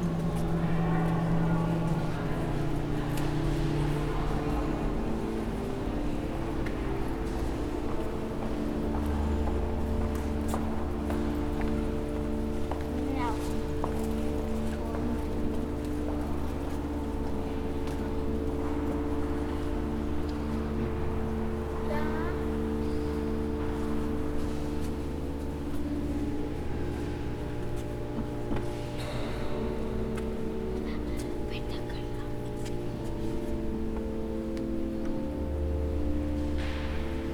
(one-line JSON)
{"title": "Bremen, St. Peters Cathedral, pipe organ practice", "date": "2010-07-22 14:33:00", "latitude": "53.08", "longitude": "8.81", "altitude": "20", "timezone": "Europe/Berlin"}